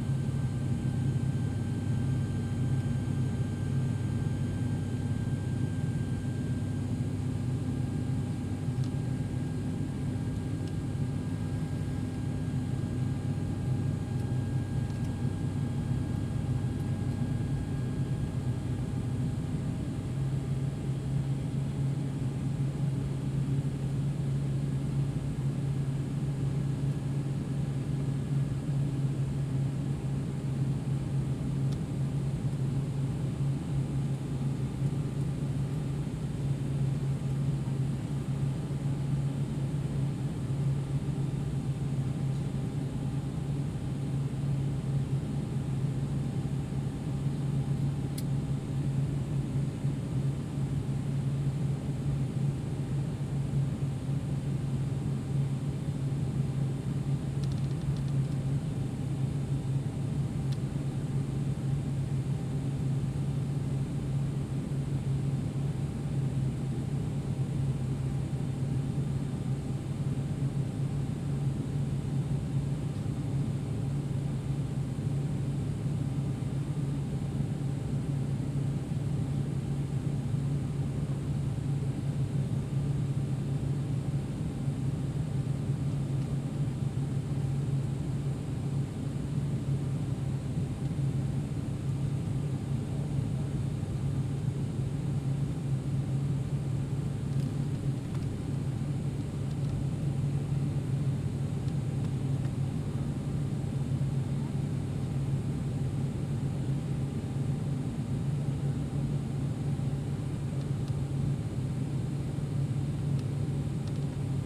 Aeropuerto Internacional El Dorado, Bogotá, Colombia - DESPEGUE DE AVION PEQUEÑO
AMBIENTE INTERIOR AVION ATR 42 RECORRIDO PISTA Y DESPEGUE, GRABACIÓN STEREO X/Y TASCAM DR-40. GRABADO POR JOSE LUIS MANTILLA GOMEZ.